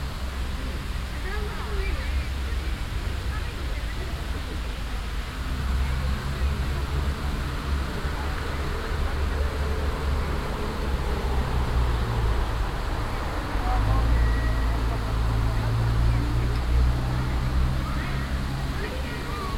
Hiroshima Park, Kiel, Deutschland - Sunday in a park in Kiel city (binaural recording)
People with children enjoying a sunny Sunday in a park in the city. Noise of a water game, some traffic, 2:30 PM chimes of the town hall clock. Sony PCM-A10 recorder with Soundman OKM II Klassik microphone and furry windjammer.
Schleswig-Holstein, Deutschland, 30 May 2021